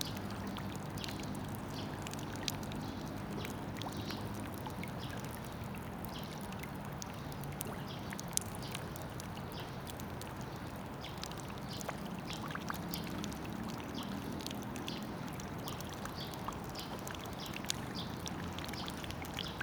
대한민국 서울특별시 서초구 양재동 126-1 :Yangjaecheon, Summer, Underpass Sewage - Yangjaecheon, Summer, Underpass Sewage
A recording at Yangjaecheon stream underpass.
birds chirping, rain gutter sound
여름 비온뒤 양재천 굴다리, 새소리, 빗물받이